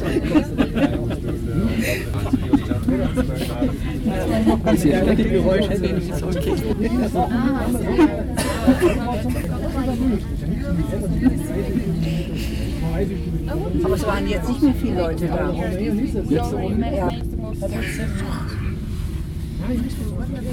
Kochel am See, Deutschland - Talfahrt mit der Herzogstand Seilbahn - in the cable car
Kurz vor Sonnenuntergang. In der Kabine dicht gedrängt Menschen aus verschiedenen Ländern. Fahrtgeräusch, Stimmengewirr, Jackenrascheln, gemeinsames Stöhnen beim Überqueren der Seilbahnstütze. Warten und Öffnen der Türen. Verabschieden. Ausgang
Descent with the Herzogstand cable car shortly before sunset. In the gondola crowded people from different countries. Riding noise, babble of voices, rustling jackets, moaning together when crossing the cable car support. Waiting and opening the doors. Goodbye. Exit.